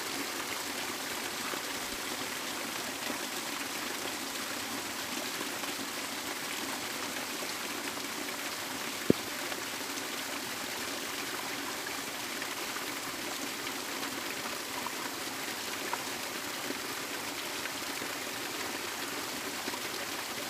Cascade trail creek, lake Chabot reservoir - Cascade trail creek, lake Chabot reservoir
waterfall and creek on Cascade trail - leading trail to the lake Chabot